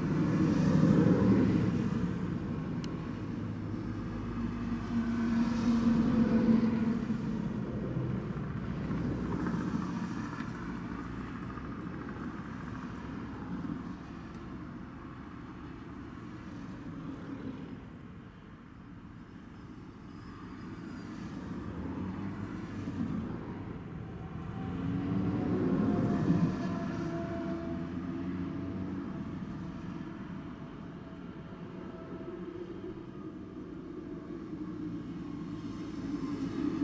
Filling station, Berwick-upon-Tweed, UK - A1 road noise by Lindisfarne filling station, Northumberland
Recorded on hand-held Tascam DR-05 from lay-by next to A1 road, sat in car with door open. Includes a few incident sounds from handling of the recording device.